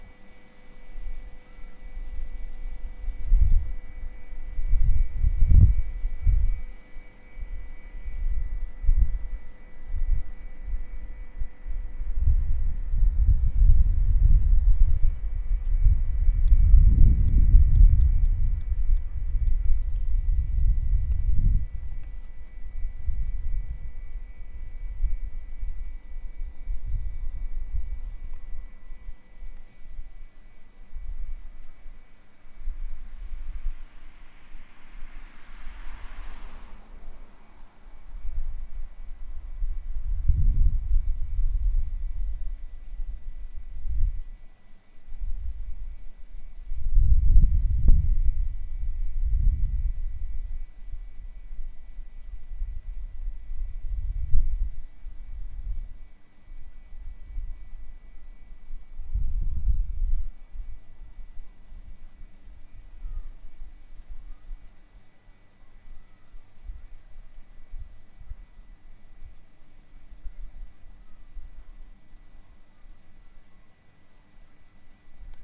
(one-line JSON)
{"title": "Bercylaan, Amsterdam, Nederland - Wasted Sound Construction Site", "date": "2019-10-30 11:43:00", "description": "Wasted Space\n‘‘The space platform offers a lot of waste. Maybe only consists of waste and objects (no waste). This could also be seen as Empty space and filled space. Unused space and used space. ........ Because all space has a potential use. All space can become the place or the space for a particular activity. This activity often is marked by the architecture.’’", "latitude": "52.39", "longitude": "4.90", "altitude": "3", "timezone": "Europe/Amsterdam"}